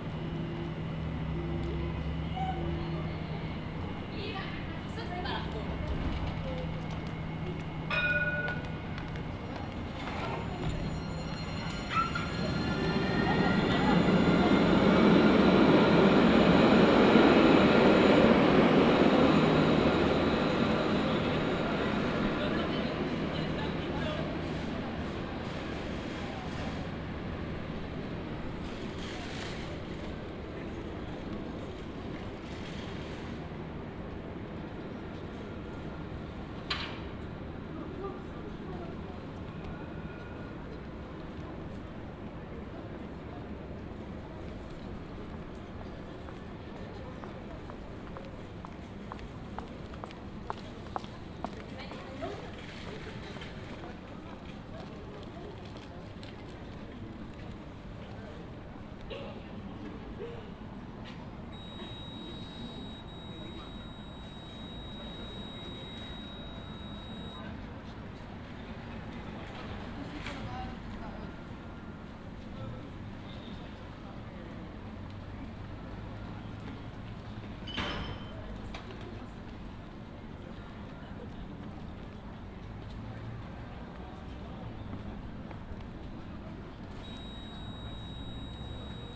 Jaffa St, Jerusalem, Israel - Jaffa St. Jerusalem
Jaffa St. Jerusalem
Tram station
Recoded by Hila Bar-Haim
מחוז ירושלים, ישראל, November 2019